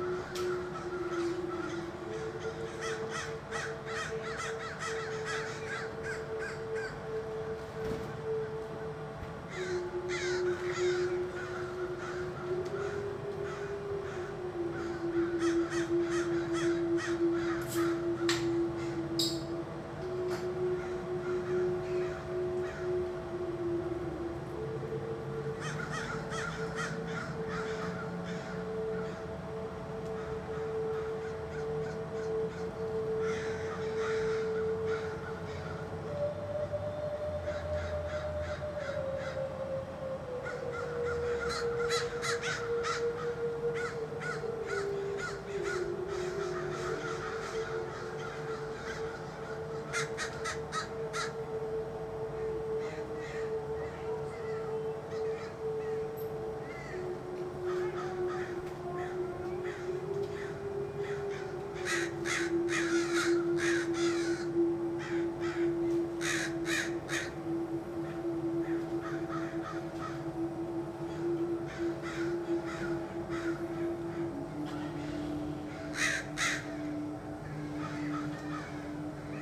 {"title": "West Berkeley, California USA", "date": "2010-10-11 03:20:00", "description": "every single crow from hood did gather on walnut tree next to the house while I was making tee and listening K.Haino", "latitude": "37.87", "longitude": "-122.30", "altitude": "8", "timezone": "America/Los_Angeles"}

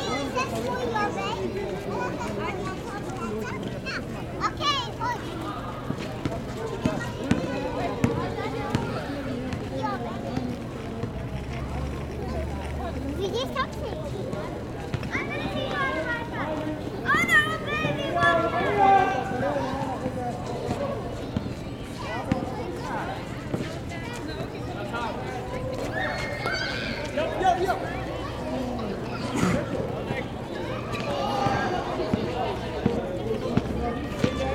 {"title": "Benninger Playground, Fresh Pond Road, Madison St, Ridgewood, NY, USA - Ridgewood Playground", "date": "2021-03-22 18:20:00", "description": "Late afternoon at the Benninger Playground in Ridgewood, Queens.\nSounds of children playing, bicycle bells, basketball sounds, and music.\nZoom h6", "latitude": "40.71", "longitude": "-73.90", "altitude": "32", "timezone": "America/New_York"}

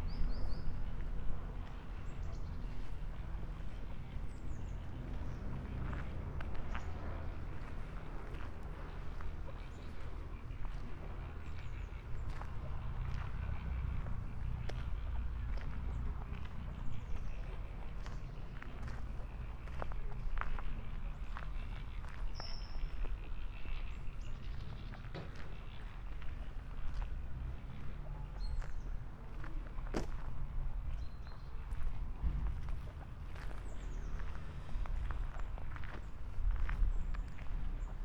{"title": "Mostecká, Mariánské Radčice, Tschechien - village walk", "date": "2017-09-22 14:45:00", "description": "strolling around in Mariánské Radčice village (Sony PCM D50, Primo EM172)", "latitude": "50.57", "longitude": "13.67", "altitude": "256", "timezone": "Europe/Prague"}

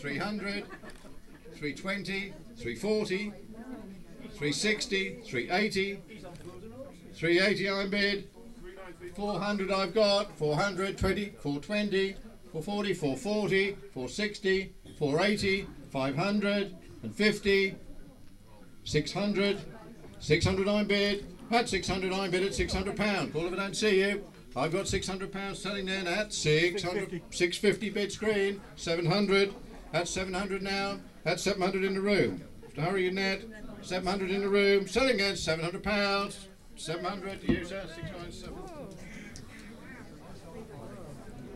{"title": "Jacksons of Reading, Reading, UK - The sale of the \"Cruella\" mannequin", "date": "2014-01-04 11:00:00", "description": "This is the sound of one of the more popular items - a scary looking mannequin known locally as \"Cruella\" in the great Jacksons auction. Sorry for the slight buzzing in the sound, I think it was either a slightly loose connection with my recorder, or the sound of an electric light flickering overhead.", "latitude": "51.46", "longitude": "-0.97", "altitude": "45", "timezone": "Europe/London"}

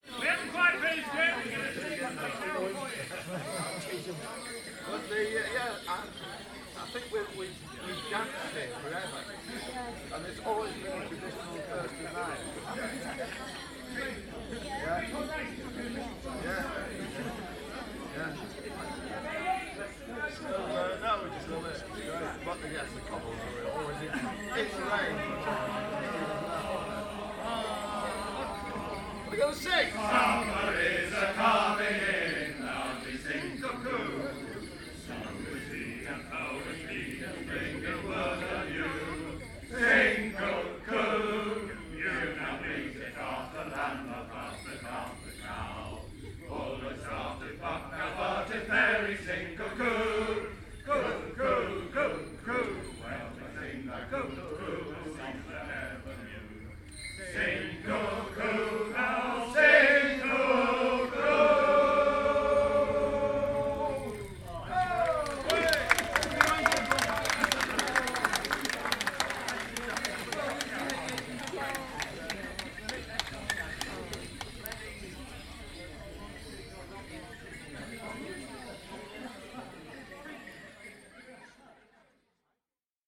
{"title": "Goring Heath Almshouses, Reading, UK - Reading Carol, sung by the Kennet Morris Men", "date": "2017-05-01 10:50:00", "description": "This is the sound of the Kennet Morris Men singing what is known as the \"Reading Carol\" AKA sumer is icumen in. The piece is a canon for several voices that dates from the mid-thirteenth century and survives in a manuscript from Reading Abbey. It celebrates the incoming summer. It celebrates the sounds of summer and singing it on the 1st of May has become something of a tradition amongst this Morris Side. Recorded with sound professional binaural microphones and my trust Edirol R-09.", "latitude": "51.51", "longitude": "-1.05", "altitude": "123", "timezone": "Europe/London"}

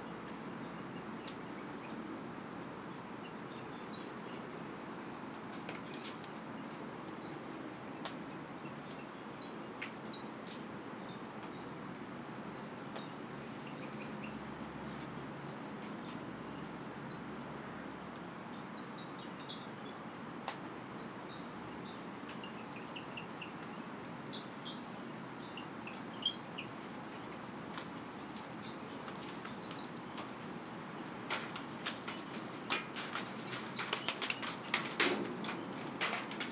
{"title": "Blackland, Austin, TX, USA - 4 AM Drizzle", "date": "2016-03-30 04:00:00", "description": "Recorded with a pair of DPA 4060s and a Marantz PMD 661.", "latitude": "30.28", "longitude": "-97.72", "altitude": "188", "timezone": "America/Chicago"}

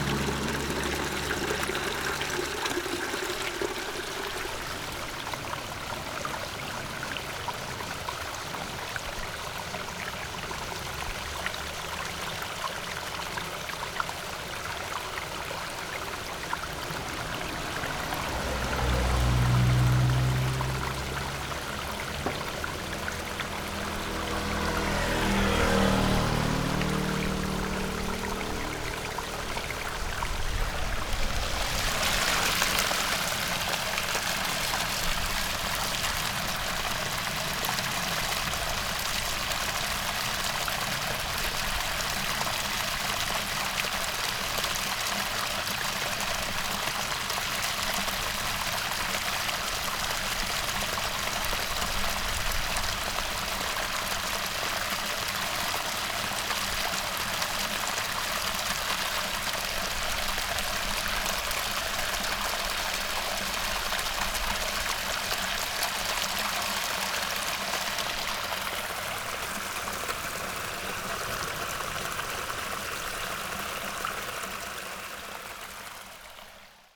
{"title": "Linkou Dist., New Taipei City - Farmland", "date": "2012-07-04 10:52:00", "description": "Stream, Farmland, Irrigation waterway\nSony PCM D50", "latitude": "25.11", "longitude": "121.30", "altitude": "47", "timezone": "Asia/Taipei"}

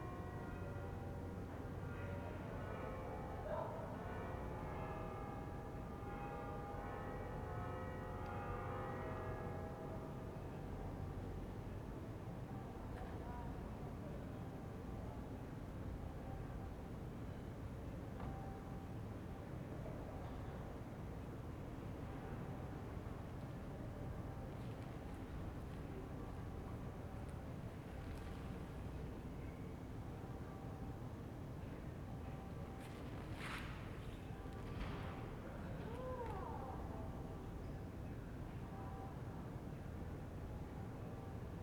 {"title": "Ascolto il tuo cuore, città. I listen to your heart, city. Several chapters **SCROLL DOWN FOR ALL RECORDINGS** - Terrace at sunset in the time of COVID19, one year after Soundscape", "date": "2021-03-17 18:45:00", "description": "\"Terrace at sunset in the time of COVID19, one year after\" Soundscape\nChapter CLXII of Ascolto il tuo cuore, città. I listen to your heart, city\nWednesday, March 17th 202I. Fixed position on an internal terrace at San Salvario district Turin, 1 year and 1 week after first lockdown due to the epidemic of COVID19.\nStart at 6:45 p.m. end at 7:13 p.m. duration of recording 27'45''", "latitude": "45.06", "longitude": "7.69", "altitude": "245", "timezone": "Europe/Rome"}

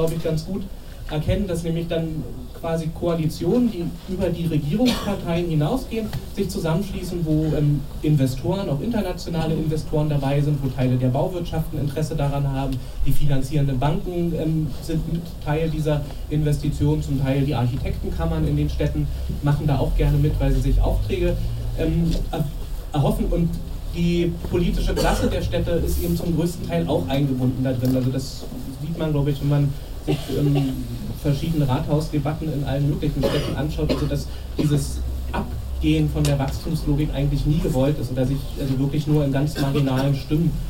{"title": "Dr. Andrej Holm. In welcher Stadt wollen wir leben? 17.11.2009. - Gängeviertel Diskussionsreihe. Teil 1", "date": "2009-11-18 12:37:00", "description": "„Die Stadt gehört ja eigentlich allen“ mit:\n- Dr. Andrej Holm / Institut für Humangeographie Goethe-Universität FFM\n- Prof. Dr. Ingrid Breckner / Stadt- und Regionalsoziologie HCU-Hamburg\n- Christoph Schäfer / Park Fiction, Es regnet Kaviar, Hamburg\n- Moderation: Ole Frahm / FSK, Hamburg", "latitude": "53.56", "longitude": "9.98", "altitude": "20", "timezone": "Europe/Berlin"}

